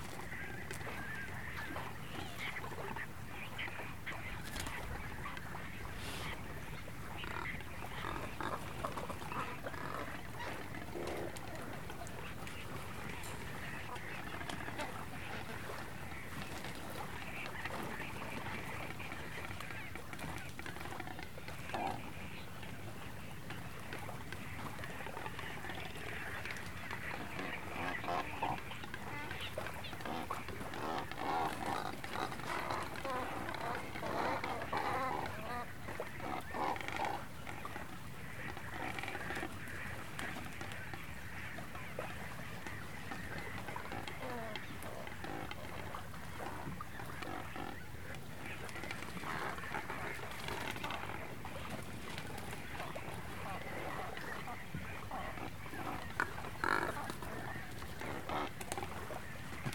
Hog Island - Hog Island Cormorants and other Ambience
This was recorded shortly before dusk (I don't recall the exact time of day) on the north shore of Hog Island. Countless numbers of cormorants (along with seagulls and some other small ocean birds) were perched in the leafless trees on the island. I'm recording from below the islands rock, on the sandy shore, mic facing up at the birds. There was a massive amount of bird droppings and you could hear/see some of them splatting against the rock (though i'm not sure I could pick them out in this recording). This was recorded on my Zoom H4n. Note: This is the first field recording I've edited and shared, so I hope it is up to snuff.
California, United States of America, 1 June 2019, 17:00